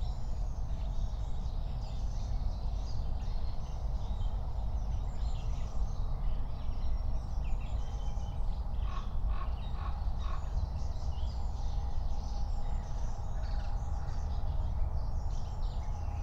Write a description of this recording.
07:00 Berlin Buch, Lietzengraben - wetland ambience